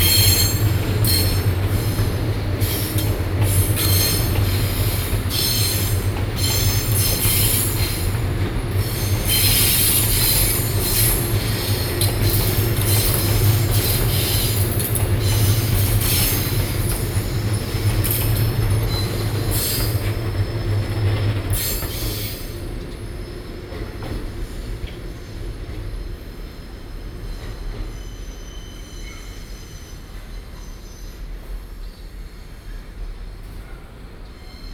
Ren'ai, Keelung - Train traveling through
Train traveling through, Sony PCM D50 + Soundman OKM II